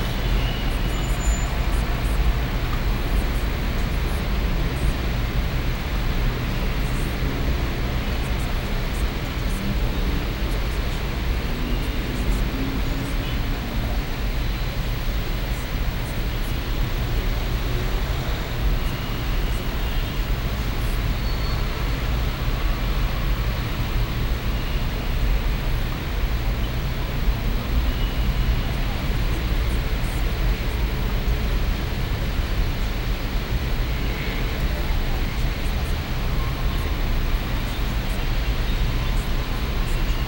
Sao Paulo, balcony 12th floor of Blue Tree Towers hotel near Av. Paulista
- Bela Vista, São Paulo, Brazil